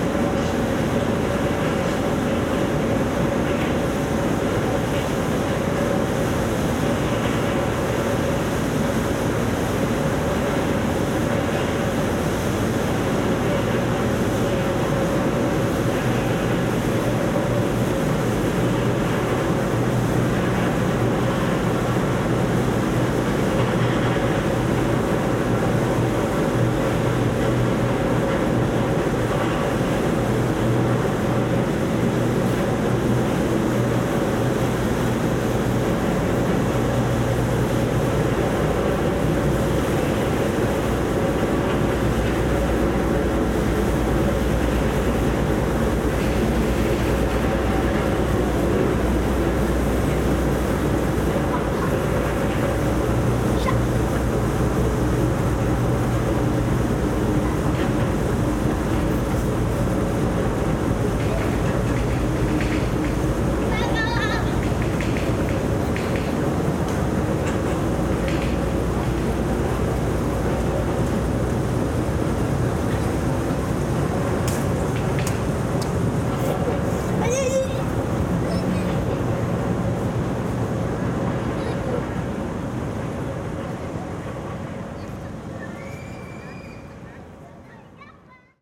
Tech Note : Ambeo Smart Headset binaural → iPhone, listen with headphones.